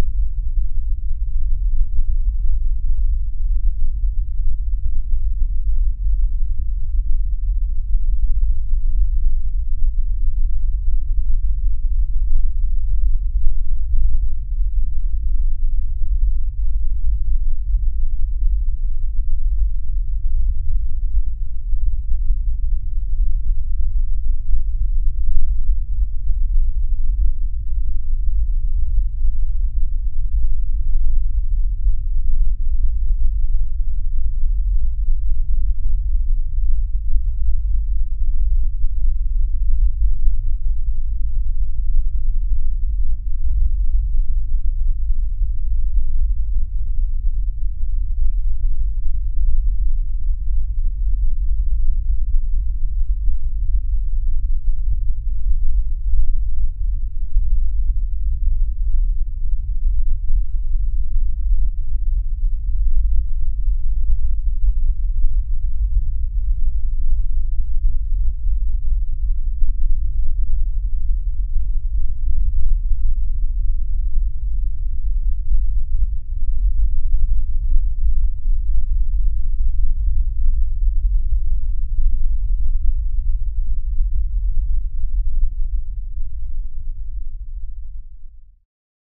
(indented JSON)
{
  "title": "Droničėnai, Lithuania, geophone on the shore",
  "date": "2022-05-06 18:50:00",
  "description": "Low frequancies! Geophone on a shore of little river.",
  "latitude": "55.52",
  "longitude": "25.66",
  "altitude": "123",
  "timezone": "Europe/Vilnius"
}